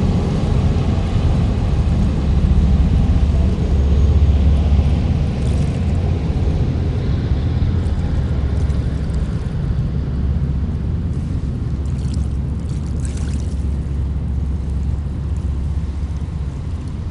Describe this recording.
Recorded with a stereo pair of DPA 4060s and a Marantz PMD661.